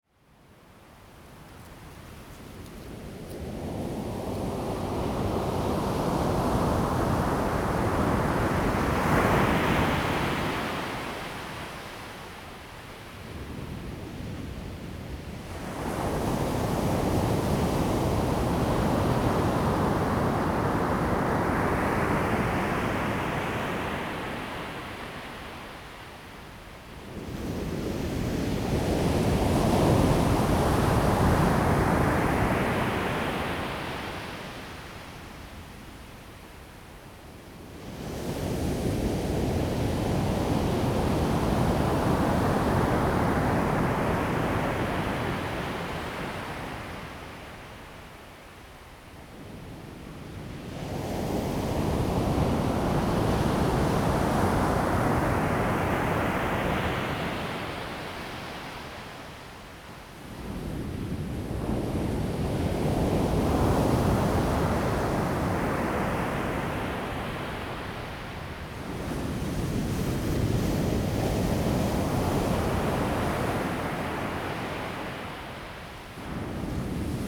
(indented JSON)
{
  "title": "泰和海岸, 太麻里鄉台東縣 - sound of the waves",
  "date": "2018-04-05 15:49:00",
  "description": "At the beach, Low tide low sea level\nZoom H2n MS+XY",
  "latitude": "22.60",
  "longitude": "121.01",
  "timezone": "Asia/Taipei"
}